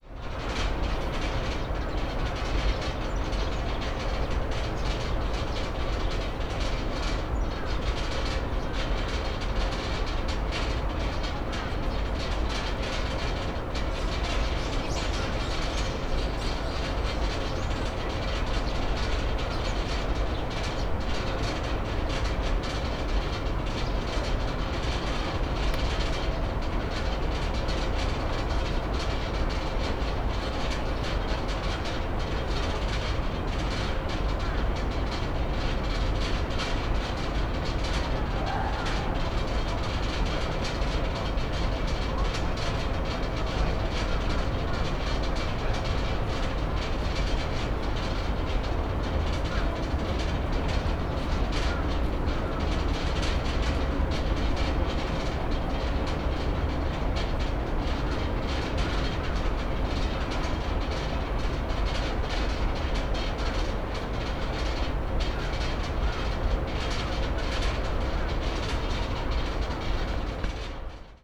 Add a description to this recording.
hum of the fan + rattle of air-conditioning grating covering the machine. dominating the atmosphere of this peaceful garden